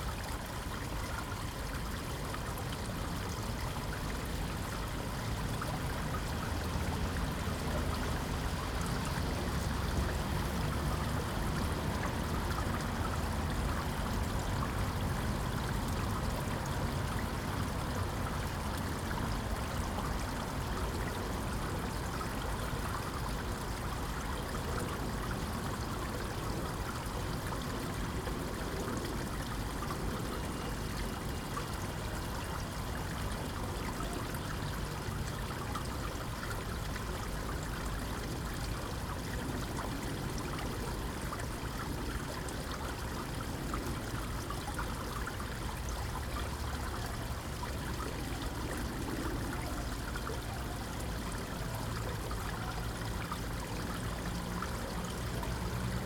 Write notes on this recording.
The Canada Memorial remembers the one million Canadians who served with British forces during the two World Wars. It faces in the direction of the Canadian port of Halifax in Nova Scotia, from where many Canadian service personnel sailed for Europe. Recorded on a Zoom H2n. There is a little wind noise as I forgot to take the wind shield with me!